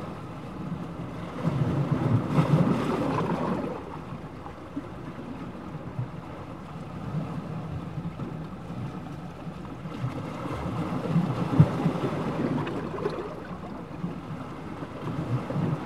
Samphire Hoe, Kent, UK - Sea bubbles
This recording was taken when the tide was out, so the light patch you can see in the image was a big pile of chalky white rock, built up (I think) to break the waves and slow down the erosion of the cliffs. For this recording the mics were placed within the pile of rocks, near the surface of the water. Because of the weird spaces in between the rocks it gives the sound a strange 'trapped' quality, mutes the sound of the sea and waves, and the loudest sounds are the bubbles and gurgles as the sea tries to find a way between the rocks.
It was a bright day on land but foggy over the sea, so you can hear a fog horn sounding at intervals of just over a minute.
(zoom H4n internal mics)